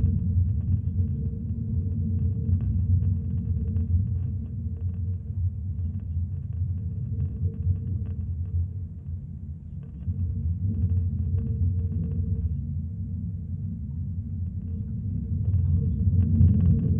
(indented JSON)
{
  "title": "Antakalnis, Lithuania, metallic pole",
  "date": "2020-06-01 18:40:00",
  "description": "some metallic pole with electricity box and wifi antennas. Geophone placed on it.",
  "latitude": "54.50",
  "longitude": "24.72",
  "altitude": "126",
  "timezone": "Europe/Vilnius"
}